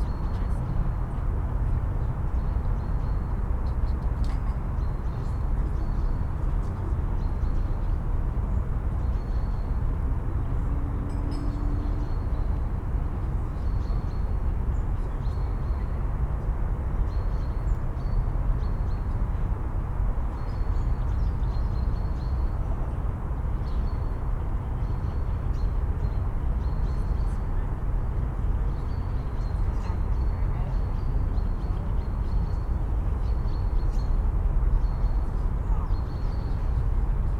deep city traffic hum heard from the terrace of Tivoli castle, around noon.
(Sony PCM D50, DPA4060))
Ljubljana, Slovenia, November 2012